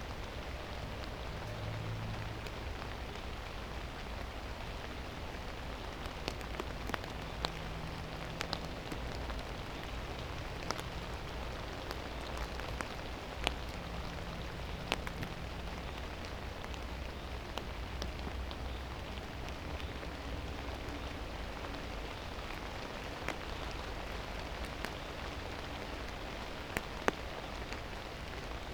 Lithuania, Utena, raining stops
binaural mics burried in the grass